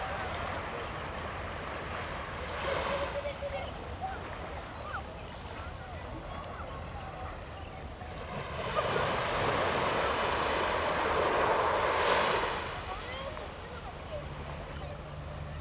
外木山海灘, 大武崙, Keelung City - At the beach
sound of the waves, At the beach
Zoom H2n MS+XY +Sptial Audio
Anle District, Keelung City, Taiwan, 4 August 2016, ~10:00